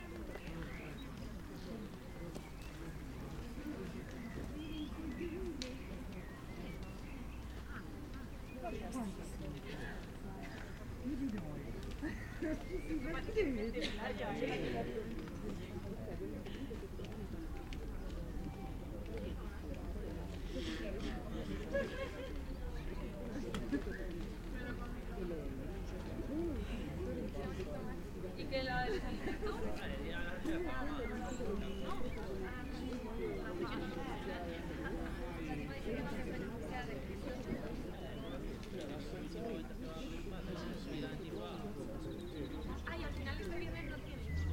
Perugia, Italia - people chilling on the grass
bells, people speaking, birds
[XY: smk-h8k -> fr2le]
Perugia, Italy